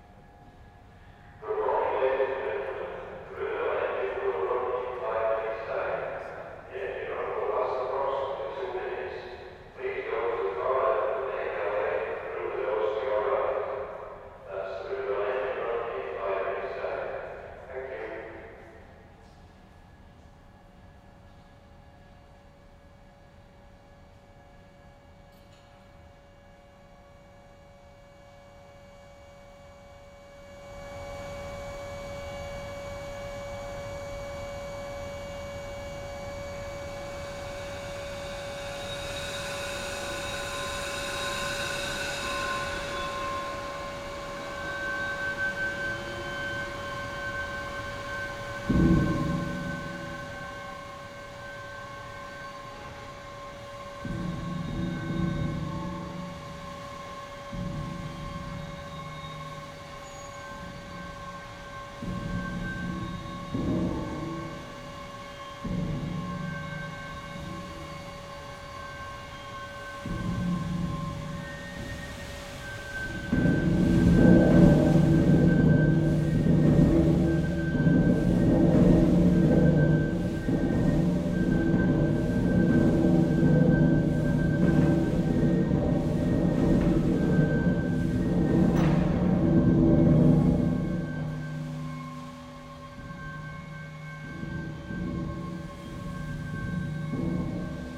The River Don Engine. Housed within Kelham Island Museum.
Developing 12,000 horsepower, The River Don Engine is a 1905-built steam engine which was used for hot rolling steel armour plate. The engine is run for approximately two minutes every day at 12 and 2pm for visitors.
(recorded with Marantz 661 with Rode NT4)